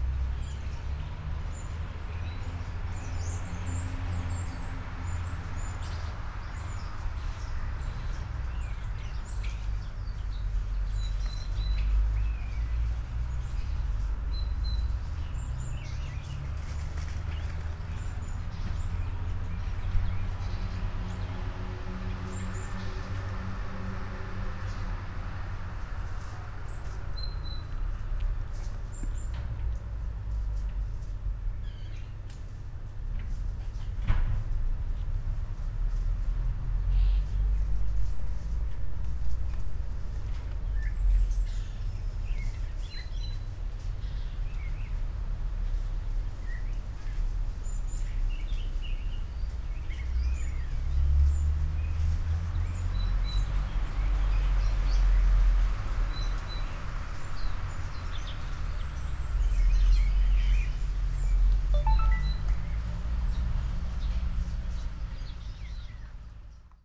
poems garden, Via Pasquale Besenghi, Trieste, Italy - could be secret garden
overgrown garden, trees and abandoned, fenced well, birds and mosquitoes allover ...